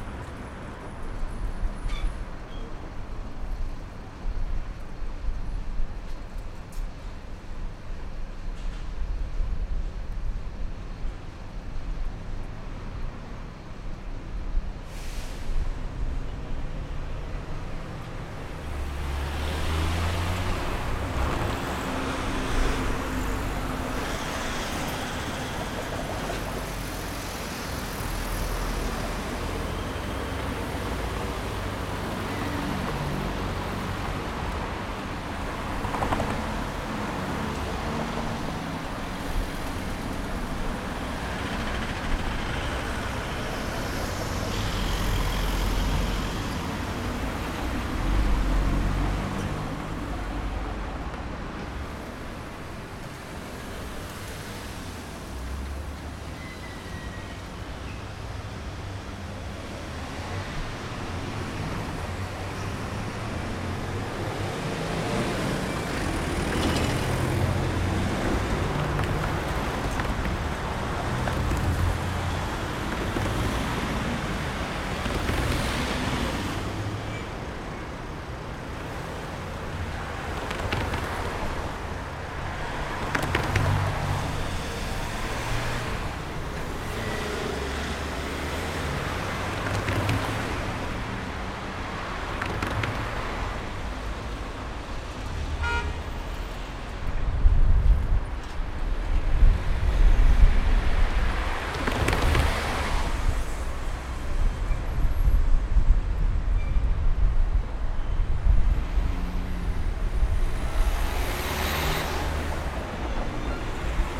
leipzig lindenau, karl-heine-straße ecke zschochersche straße
karl-heine-straße ecke zschochersche straße: eine vielbefahrene kreuzung zwischen verkehrslärm und momenten urbaner stille. autos, straßenbahnen, räder als urbane tongeber.
Leipzig, Deutschland, 31 August 2011